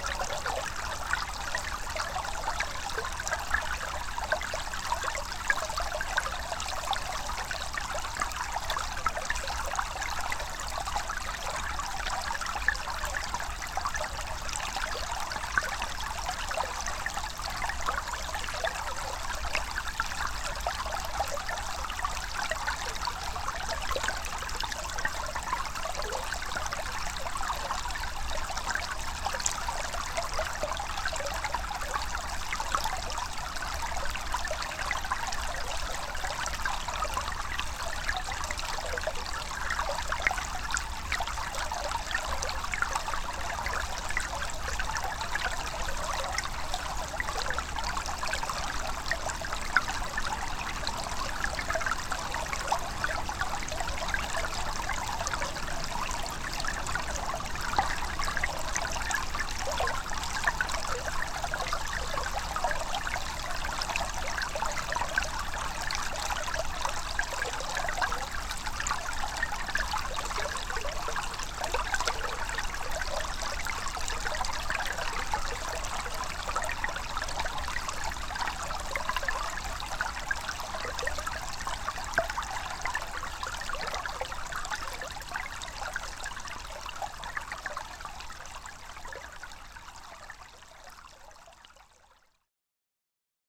small streamlet near river Sventoji. recorded with a pair of omni mics and hydrophone